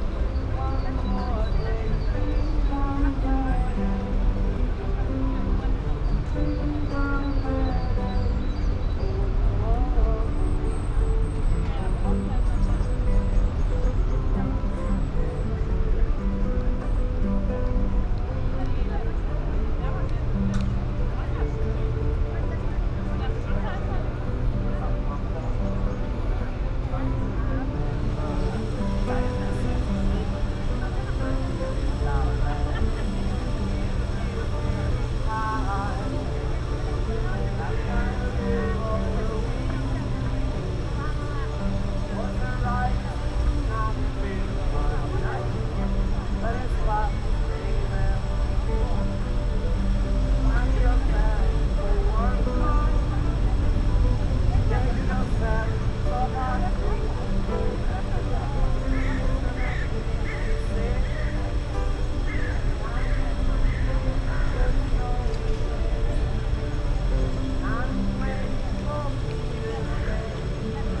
berlin, paul-lincke-ufer: promenade - the city, the country & me: singer vs. construction site
singer at the terrace on the opposite of the landwehrkanal, noise of a nearby construction site, promenadersw, byciclists
the city, the country & me: march 4, 2014